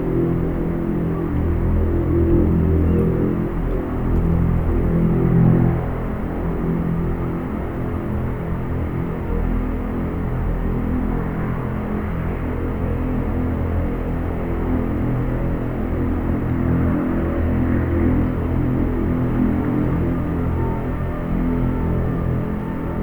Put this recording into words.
soundscape heard through a long plastic pipe on a construction site. No FX just a bit of noise filtering.